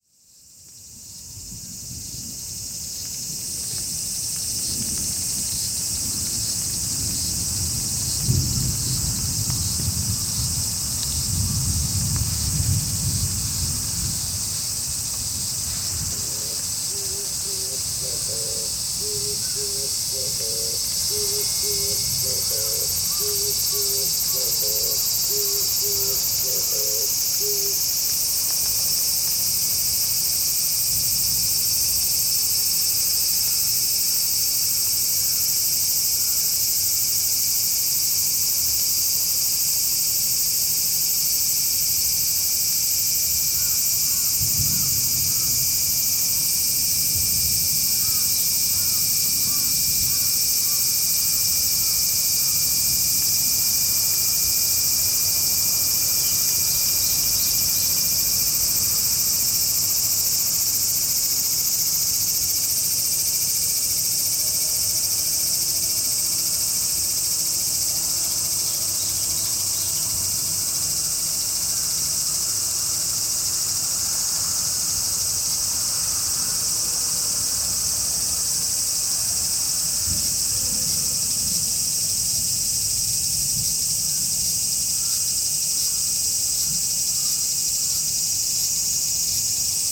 Takano, Ritto, Shiga Prefecture, Japan - Cicadas and Thunder
Cicadas, thunder, and a few birds on a dark Sunday afternoon in Takano Playground. It was raining very lightly, and no children playing.
5 August 2013, 17:30